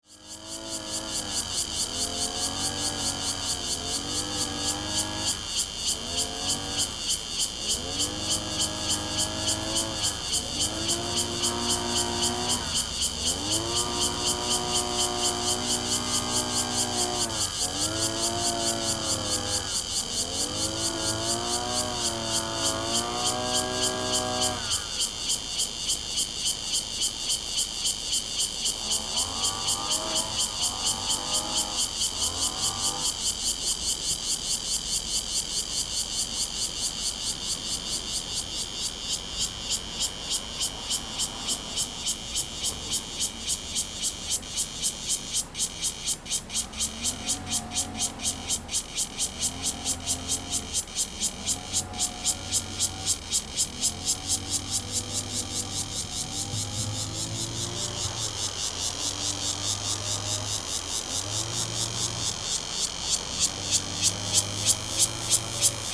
新福里, Guanshan Township - Cicadas sound
Cicadas sound, Traffic Sound, Lawn mower, Very hot weather
Zoom H2n MS+ XY